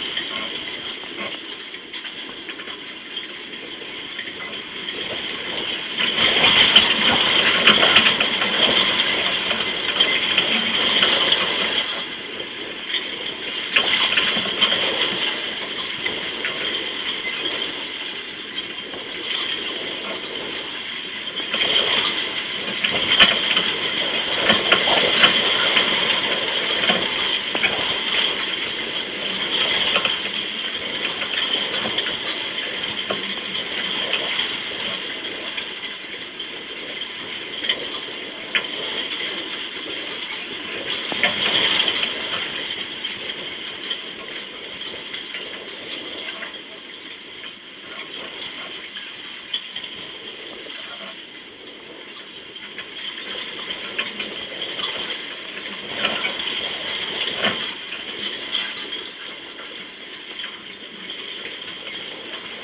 Luttons, UK - Fencing with contact mics ...
Galvanised wire stock fencing in a gale ... two contact mics pushed into the wire elements ... the resulting rattling was wonderful when listening with headphones ...